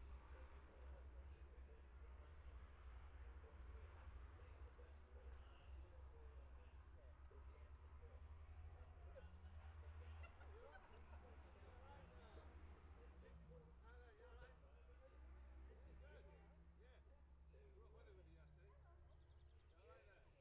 bob smith spring cup ... twins group A practice ... dpa 4060s to MixPre3 ...